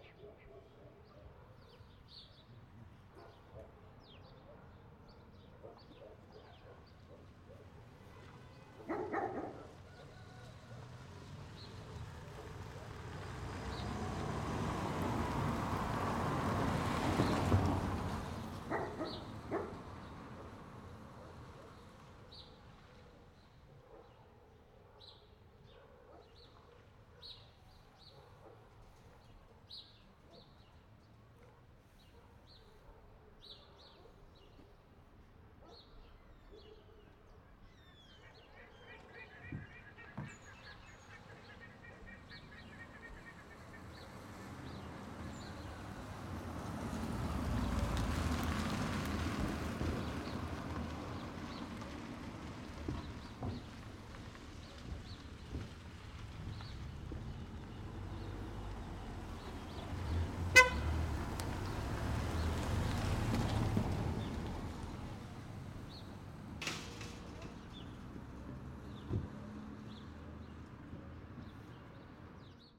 Arica, Corral, Valdivia, Los Ríos, Chili - AMB CORRAL MORNING STREET ACTIVITY CAR PASSING DOG WALLA MS MKH MATRICED
This is a recording of a street in Corral, by morning. I used Sennheiser MS microphones (MKH8050 MKH30) and a Sound Devices 633.